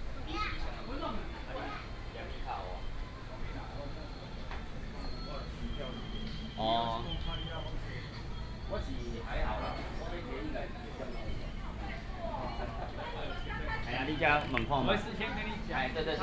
中華市場, Hualien City - Walking through the market
Walking through the market, Traffic Sound
Hualien County, Hualien City, 信義街77巷4號, 29 August 2014